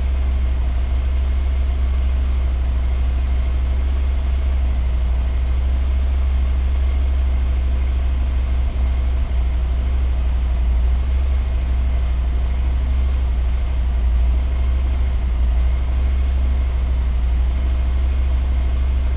motor bike start up and run ... lavalier mics ... 700cc parallel twin ... 270 degrees firing order ...
Malton, UK